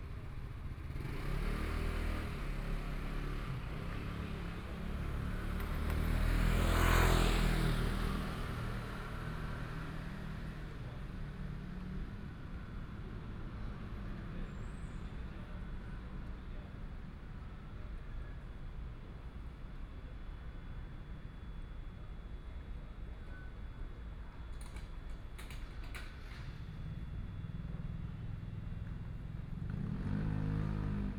中山區集英里, Taipei City - Night at the intersection
.Night at the intersection, Sitting on the roadside, Traffic Sound
Please turn up the volume a little. Binaural recordings, Sony PCM D100+ Soundman OKM II